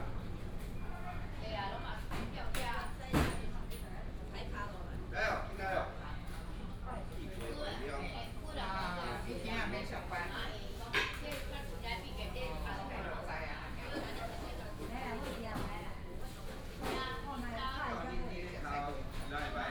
南寮市場, Hsinchu City - small traditional market
small traditional market, vendors peddling, housewives bargaining, and girls gossiping